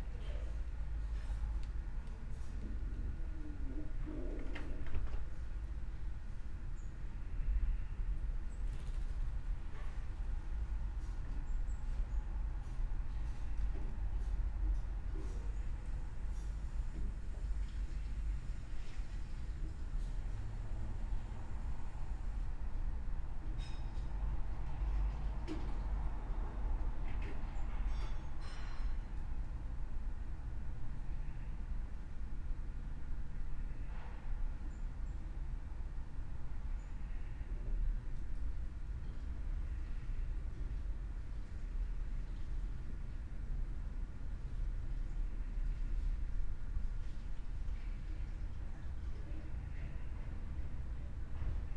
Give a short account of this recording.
ambience in the backyard, Saturday around noon, a pidgeon makes strange sounds. (Sony PCM D50, Primo EM172)